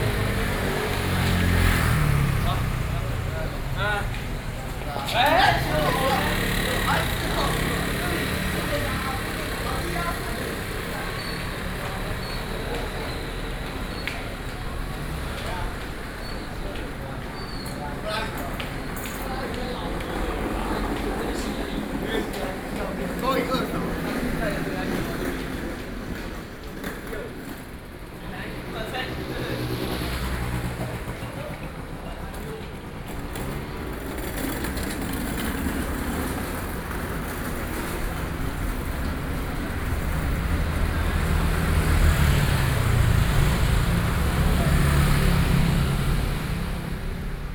新莊路, Xinzhuang Dist., New Taipei City - SoundWalk